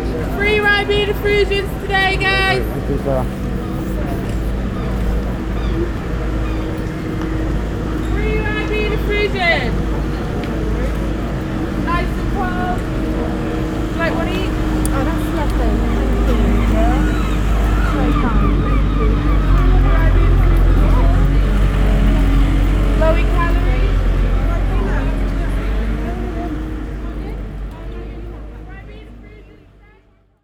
Ribena Girl - The Cross, Worcester, UK
A girl advertises a soft drink in the busy pedestrian area of the city.